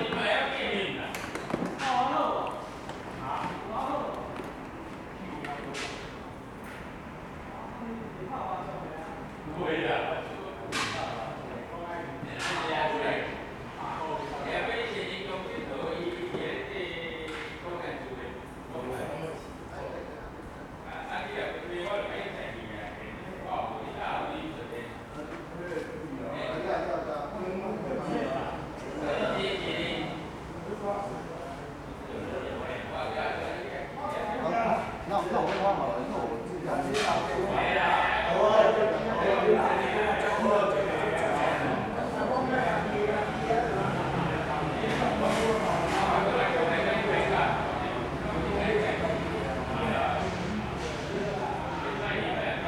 嘉天宮, Sanchong Dist., New Taipei City - In front of the temple
In front of the temple, Traffic Sound
Sony Hi-MD MZ-RH1 +Sony ECM-MS907
New Taipei City, Taiwan, 10 February 2012, ~13:00